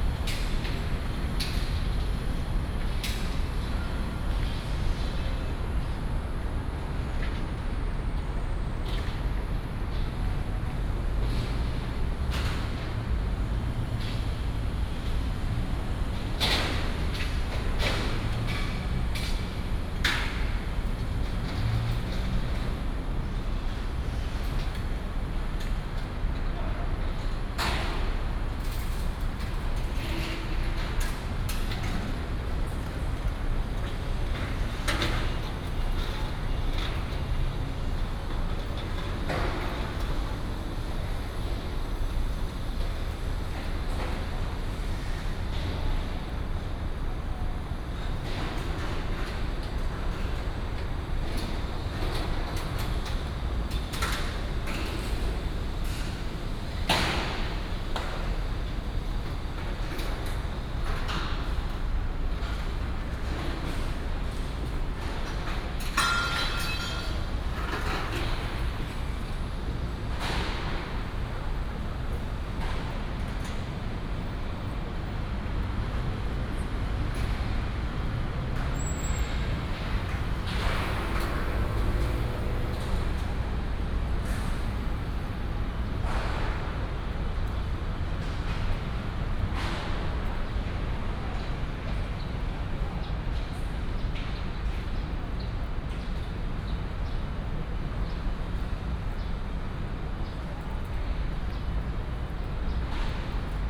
瑠公圳公園, Taipei City - Walking through the park
Walking through the park, Hot weather, Bird calls, Construction noise
Taipei City, Taiwan, 18 June